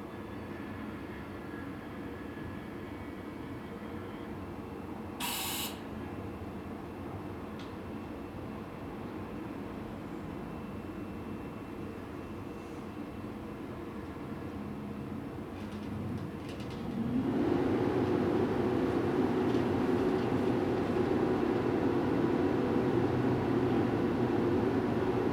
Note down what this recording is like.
recorded with KORG MR-2, in front of a building